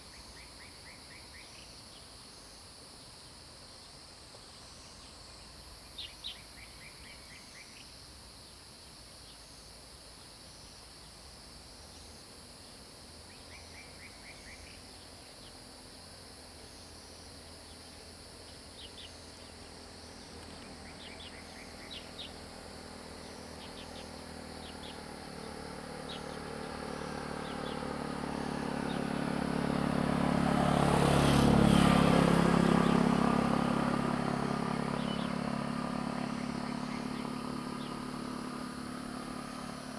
Taomi Ln., Puli Township, Taiwan - Early morning
Early morning, Bird calls
Zoom H2n MS+XY
Puli Township, 桃米巷11-3號, 12 August